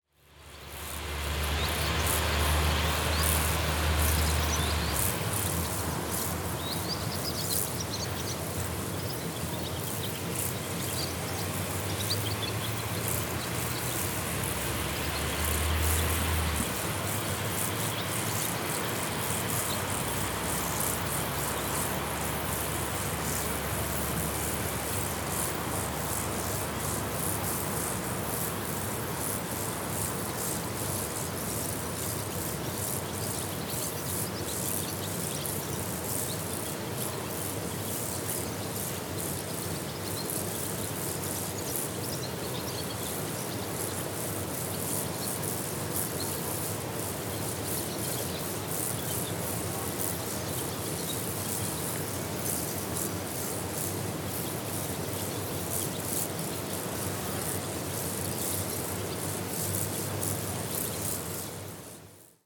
Recordist: Raimonda Diskaitė
Description: Recorded on a forest road. Bird sounds, insects and distant traffic. Recorded with ZOOM H2N Handy Recorder.